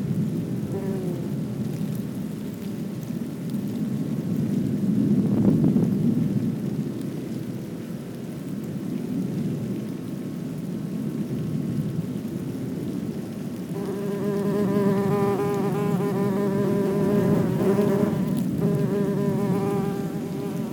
Silwood Park, Ascot, UK - Wasps' nest
A wasps' nest in the base of a fallen tree, near a road, and under a Heathrow airport flight path. Recorded on a Sony PCM-M10 with Naiant stereo lavaliere mics lowered close to the nest.
31 August 2016